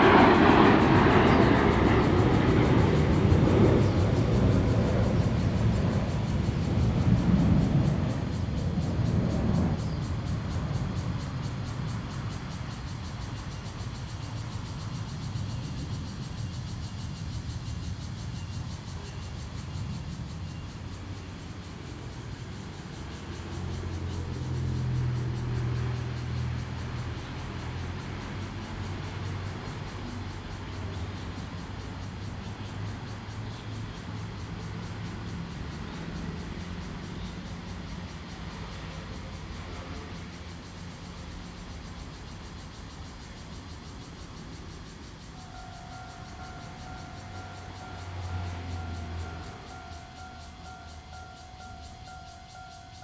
Zhonghua Rd., Hualien City - under the trees
under the trees, Traffic Sound, Cicadas sound, Fighter flying through, Train traveling through
Zoom H2n MS+XY
Hualien County, Taiwan, 29 August 2014